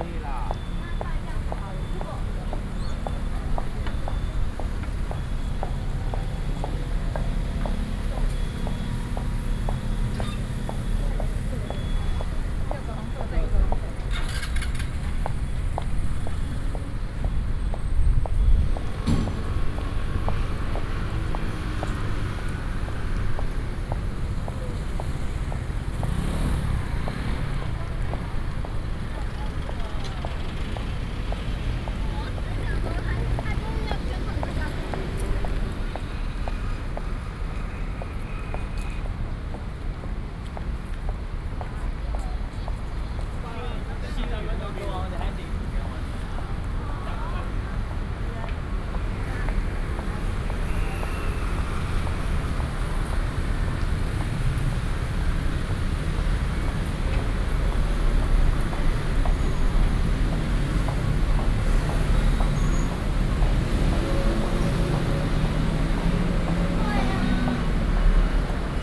Sec., Heping E. Rd., Taipei City - Walking on the road
Walking on the road, Footsteps, Traffic Sound
Zoom H4n+ Soundman OKM II